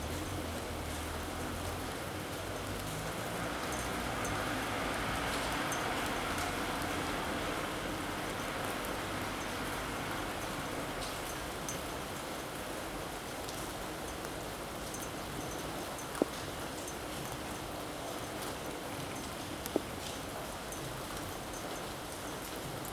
Carrer de Joan Blanques, Barcelona, España - Rain23032020BCNLockdown
Rain field recording made from a window during the COVID-19 lockdown.
Catalunya, España, 23 March 2020